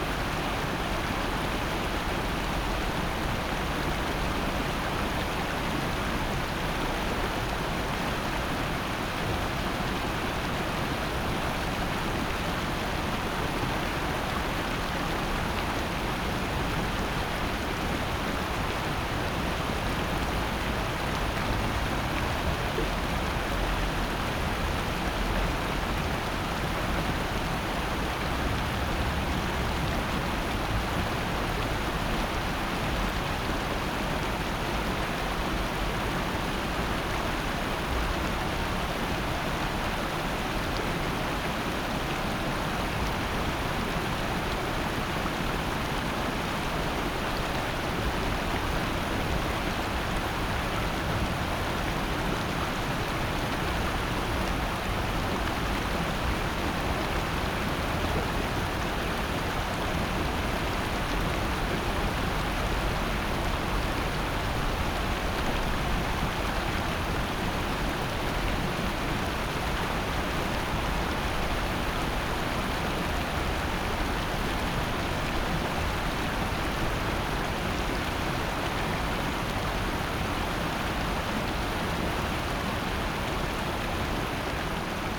양재천, 장마철 비온 뒤 물이 불었을 때의 소리.
Yangjaecheon Stream, monsoon season. flowing water